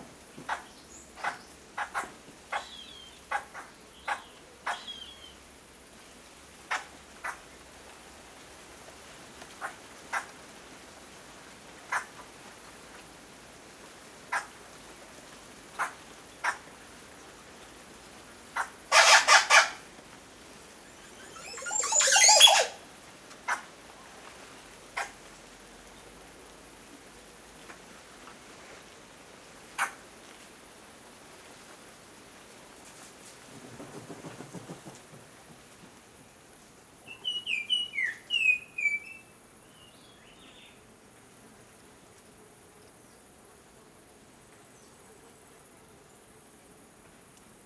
Telaya, Veracruz, Mexico - Montezuma oropendola bird call
Montezuma Oropendola (Psarocolius montezuma)singing in a tree, in the middle of a banana field. Recorded close to the village of Paso de Telaya in the state of Veracruz.
ORTF microphone setup, Schoeps CCM4 x 2 in a Cinela windscreen
Sound Devices MixPre
Sound Ref: MX-200402-03
GPS: 20.156221, -96.873653
Recorded during a residency at Casa Proal
Veracruz de Ignacio de la Llave, México, 2 April